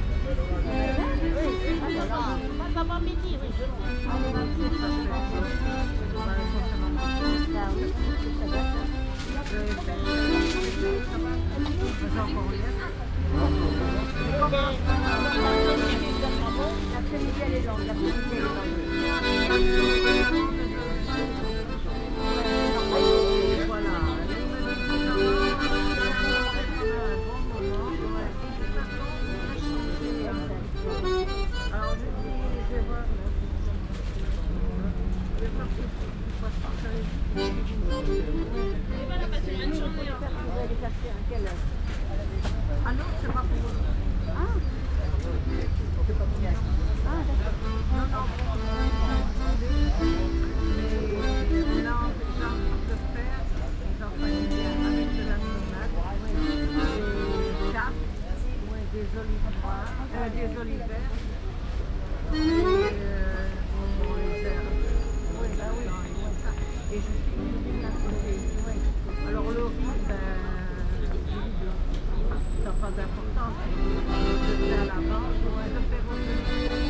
{
  "title": "Paris, Boulevard Richard Lenoir, Market ambience with accordion player",
  "date": "2011-05-20 12:06:00",
  "description": "Market ambience with accordion player",
  "latitude": "48.86",
  "longitude": "2.37",
  "altitude": "45",
  "timezone": "Europe/Paris"
}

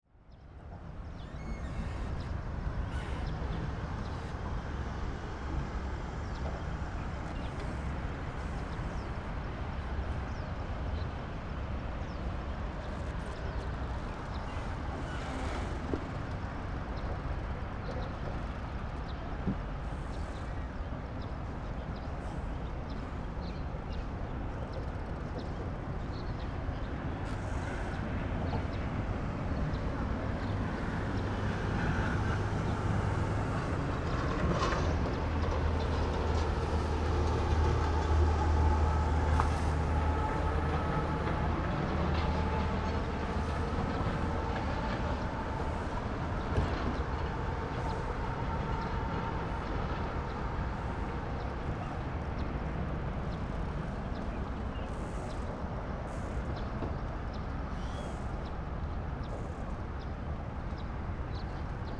{
  "title": "八里, Bali Dist., New Taipei Cit - In the small fishing pier",
  "date": "2012-04-09 07:36:00",
  "description": "In the small fishing pier, traffic sound\nSony PCM D50",
  "latitude": "25.14",
  "longitude": "121.38",
  "altitude": "2",
  "timezone": "Asia/Taipei"
}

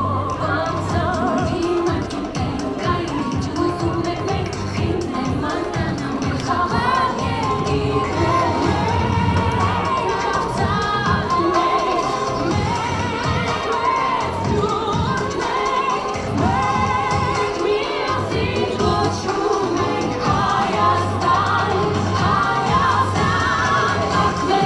{
  "title": "Yerevan, Arménie - First day at school",
  "date": "2018-09-01 10:45:00",
  "description": "The first day at school is very important in Armenia. It's a local festivity. During this morning and before the first hour in class, young students proclaim speeches.",
  "latitude": "40.17",
  "longitude": "44.52",
  "altitude": "992",
  "timezone": "Asia/Yerevan"
}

